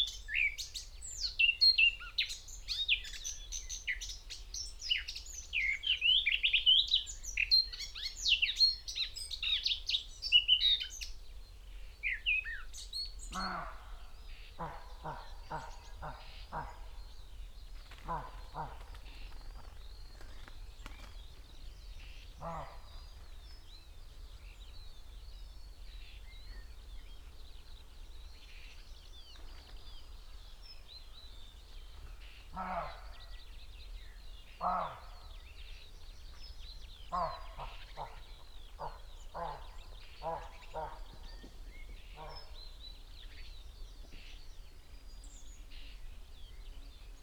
{"title": "Malton, UK - blackcap ... roedeer ...", "date": "2021-06-27 06:52:00", "description": "blackcap ... roe deer ... bird song ... calls ... from skylark ... wood pigeon ... whitethroat ... great tit ... crow ... from extended unattended time edited recording ... sass on tripod to zoom h5 ..", "latitude": "54.14", "longitude": "-0.55", "altitude": "126", "timezone": "Europe/London"}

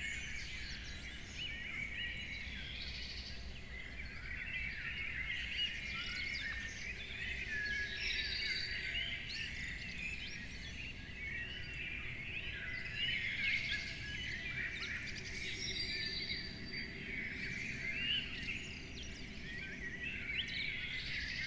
{"title": "Niedertiefenbach - morning bird chorus", "date": "2018-06-30 04:30:00", "description": "Beselich Niedertiefenbach, early morning bird chorus heard at the open window\n(Sony PCM D50", "latitude": "50.44", "longitude": "8.14", "altitude": "208", "timezone": "Europe/Berlin"}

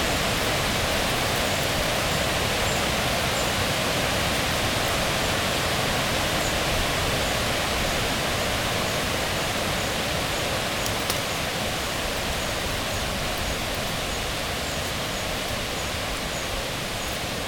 {"title": "Mont-Saint-Guibert, Belgique - Alone with the big tree", "date": "2017-05-26 16:40:00", "description": "Spring time, hot weather, a lot of wind in the leaves and alone with the big lime-tree.", "latitude": "50.63", "longitude": "4.60", "altitude": "116", "timezone": "Europe/Brussels"}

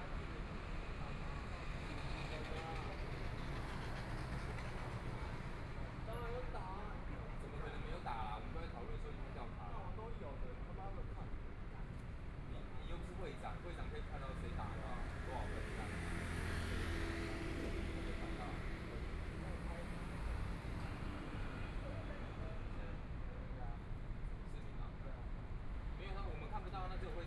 {"title": "Sanmin St., Hualien City - In the corner", "date": "2014-02-24 15:51:00", "description": "Group of young people chatting, Traffic Sound\nPlease turn up the volume\nBinaural recordings, Zoom H4n+ Soundman OKM II", "latitude": "23.98", "longitude": "121.61", "timezone": "Asia/Taipei"}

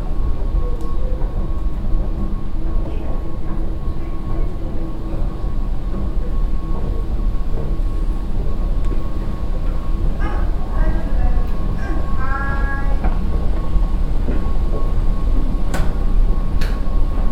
{"title": "yokohama, queens square, moving staircase", "date": "2011-06-30 17:34:00", "description": "a huge moving staircase on the 4th floor of the building leading to the office level.\ninternational city scapes - social ambiences and topographic field recordings", "latitude": "35.46", "longitude": "139.63", "altitude": "52", "timezone": "Asia/Tokyo"}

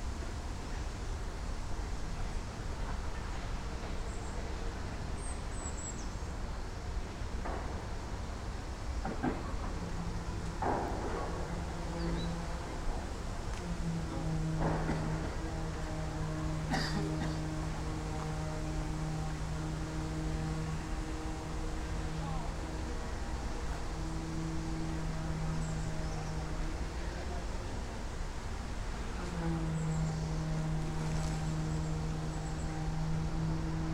{"title": "Kaliningrad, Russia, pigeons and passengers", "date": "2019-06-08 09:35:00", "latitude": "54.71", "longitude": "20.52", "altitude": "13", "timezone": "Europe/Kaliningrad"}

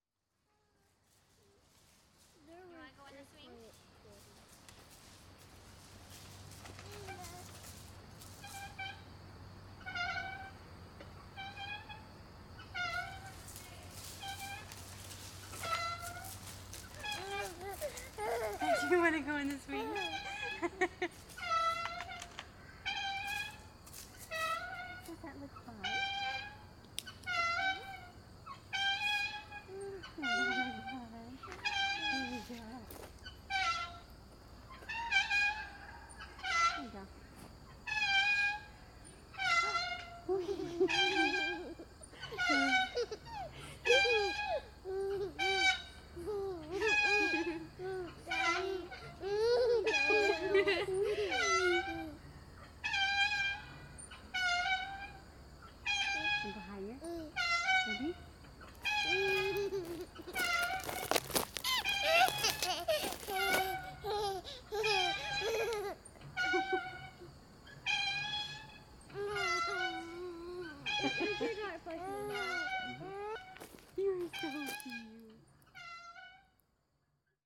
{"date": "2019-10-20 15:00:00", "description": "Baby on a swing at the Rustic Oak Shelter playground, Ouabache State Park, Bluffton, IN (sound recording by Jeremy and Carter Miller)", "latitude": "40.72", "longitude": "-85.11", "altitude": "263", "timezone": "America/Indiana/Indianapolis"}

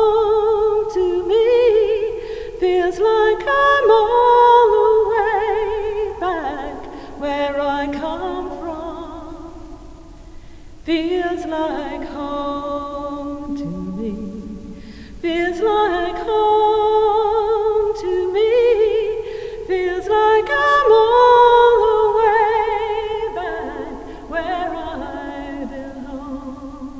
{"title": "Singer in the Chapter House, Worcester Cathedral, UK - Singer", "date": "2019-09-12 11:17:00", "description": "A visitor singing in the echoing Chapter House enjoying the acoustics. I was wandering in the cloisters, heard her voice and managed to capture this clip. MixPre 3 with 2 x Sennheiser MKH 8020s + Rode NTG3.", "latitude": "52.19", "longitude": "-2.22", "altitude": "26", "timezone": "Europe/London"}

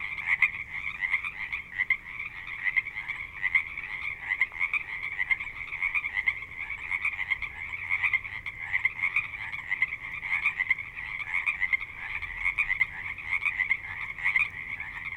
{"title": "Reno, Nevada, USA - Frogs in Reno", "date": "2021-04-21 20:20:00", "description": "Frogs in a wetland near Reno, NV. Dog barking occasionally.", "latitude": "39.43", "longitude": "-119.74", "altitude": "1360", "timezone": "America/Los_Angeles"}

